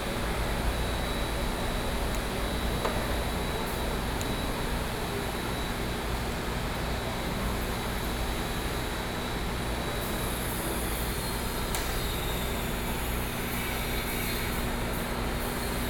Chiayi Station, TRA, Chiayi City - Station hall
Construction noise, Message broadcasting station, The sound is very loud air conditioning, Sony PCM D50 + Soundman OKM II